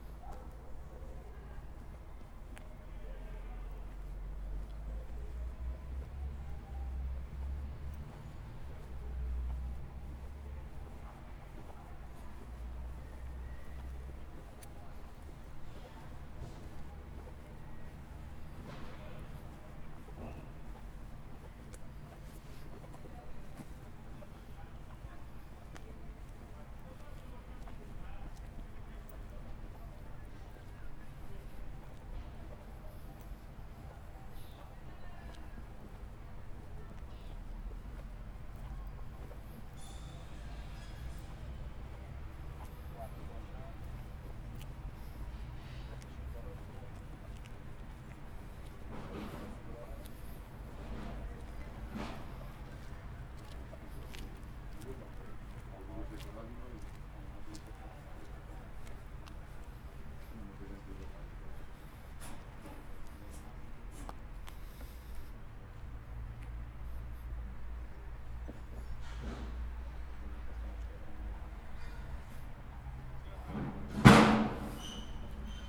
Mafamude, Portugal - Soundwalk, RTP
Soundwalk in RTP, Porto.
Zoom H4n and Zoom H2
Carlo Patrão & Miguel Picciochi